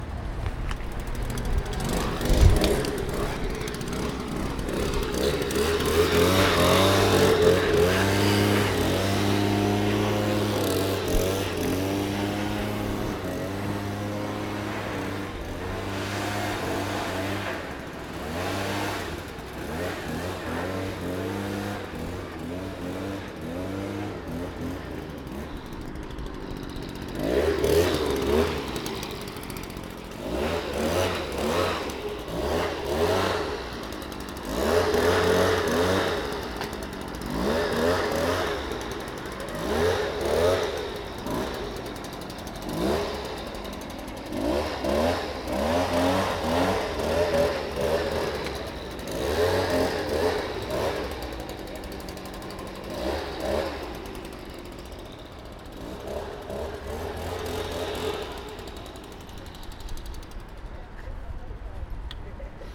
Solingen, Deutschland - Laubbläser / Leaf blower
Ein Mitarbeiter der Stadtreinigung säubert die Bushaltestelle mit einem Laubbläser. / An employee of the city cleaning cleans the bus stop with a leaf blower.
Solingen, Germany, 22 September